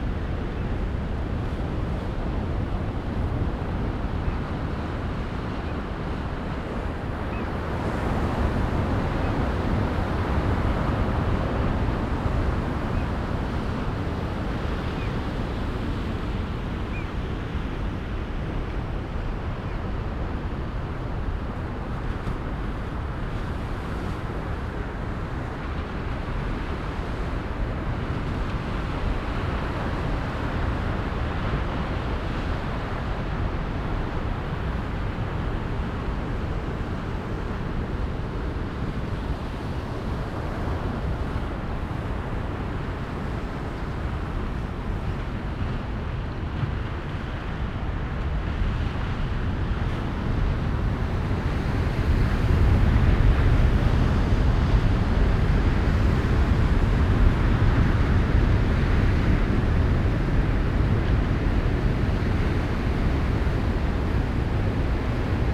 Quobba Station, Gnaraloo Rd, Macleod WA, Australien - Indian Ocean at sunset
The Roar of large waves breaking on the beach in a remote part of western Australia. In the beginning of the recording black oystercatchers can be heard calling as they fly along the coastline. Recorded with a Sound Devices 702 field recorder and a modified Crown - SASS setup incorporating two Sennheiser mkh 20 microphones.